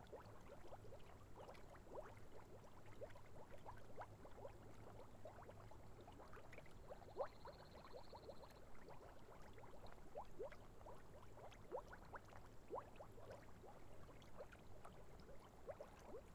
Caldara di Manziana - Small mud pools
A little puddle with boiling mud. Some crickets and bird chirps in the distance, along with other animals calls fro the near wood.
The audio has been cropped to eliminate plane's noises from the near airport.
No other modifications has been done.
TASCAM DR100 MKII